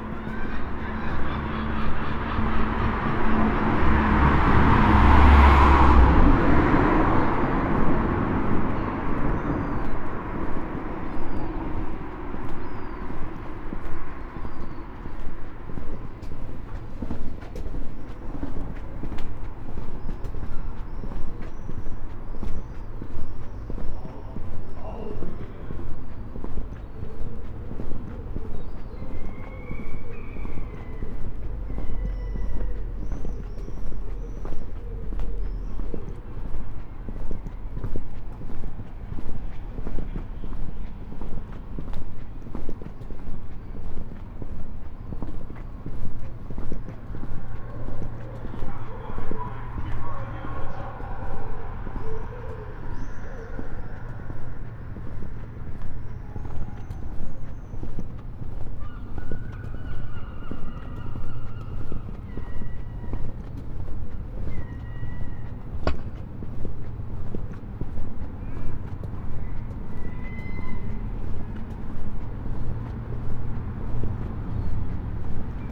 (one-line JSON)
{"title": "Night Walk, Aldeburgh, Suffolk, UK - Walk", "date": "2021-07-08 22:21:00", "description": "Beginning and ending near The White Hart pub this walk at 10pm records the end of a sunny day in a town now quiet. Some voices, snatches of a football game on TV through open windows, gulls and the occasional car.", "latitude": "52.15", "longitude": "1.60", "altitude": "9", "timezone": "Europe/London"}